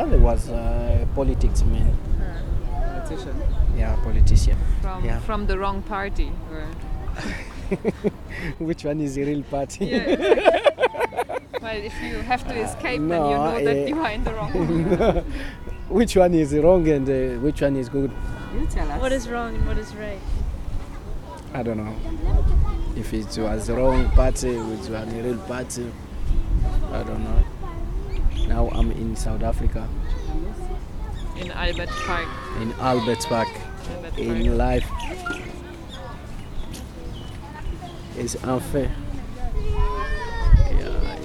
Albert Park, Durban, South Africa - I was a journalist in my country...
A gathering of makeshift shelters in a public park in the city of Durban, South Africa. A group of Congolese have been living here under plastic cover since June. Pots over open wood fires, washing on lines between the trees, many children are running around the huts. What happened? What made the group settle here under precarious conditions? What happens to the children when it's raining…? Gideon, a local passer-bye talks to Delphine, one of the group who is now living at Albert Park. Delphine responds with questions and songs and tells their story....
12 October 2008, 14:16